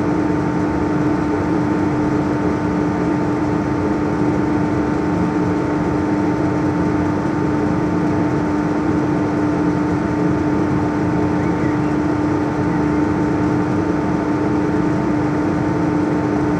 burg/wupper, schlossplatz: seilbahn - the city, the country & me: chairlift - dc motor
the city, the country & me: may 6, 2011
Solingen, Germany, 6 May 2011, ~12:00